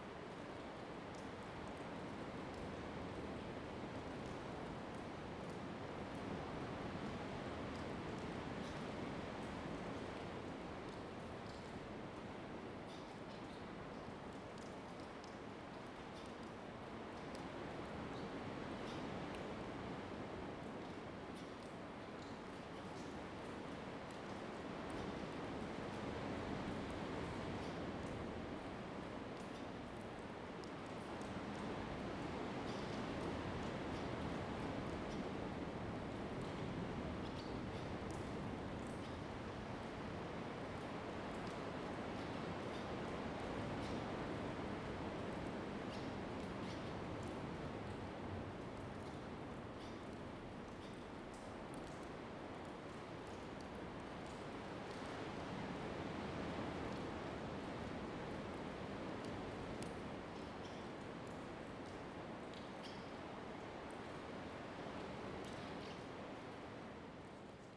燕子洞, Lüdao Township - In a large cave inside
In a large cave inside
Zoom H2n MS +XY
Taitung County, Taiwan, 31 October, ~09:00